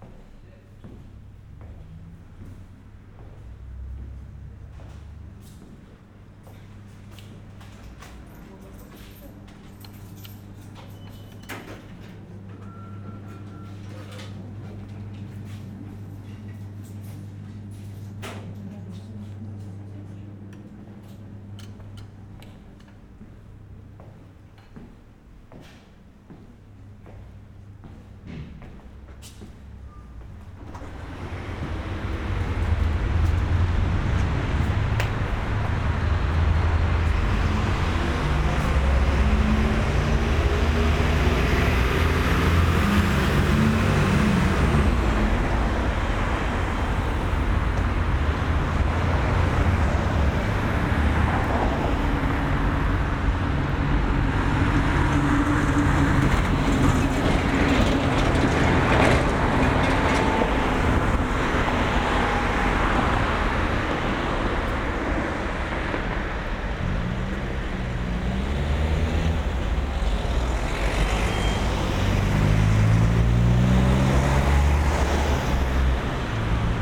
berlin: mariendorfer damm - the city, the country & me: mariendorf soundwalk
soundwalk between westphalweg and ullsteinstraße
the city, the country & me: september 4, 2013
Berlin, Germany